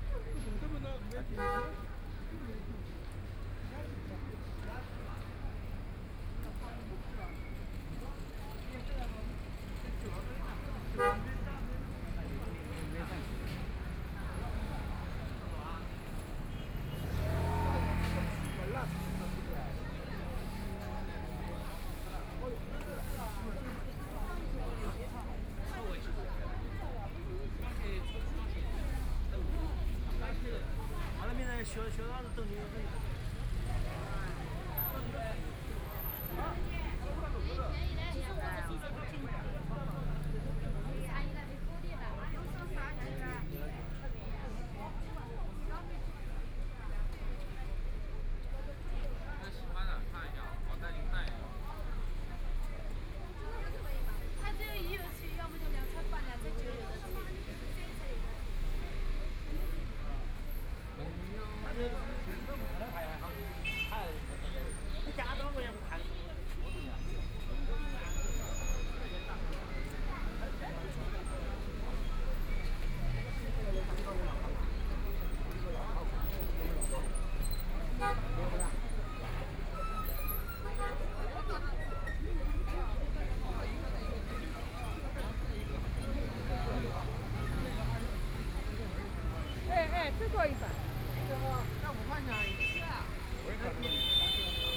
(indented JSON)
{
  "title": "West fangbang Road, Shanghai - walking in the Street",
  "date": "2013-12-03 13:43:00",
  "description": "Fair, The crowd gathered on the street, Voice chat, Traffic Sound, Binaural recording, Zoom H6+ Soundman OKM II",
  "latitude": "31.22",
  "longitude": "121.48",
  "altitude": "10",
  "timezone": "Asia/Shanghai"
}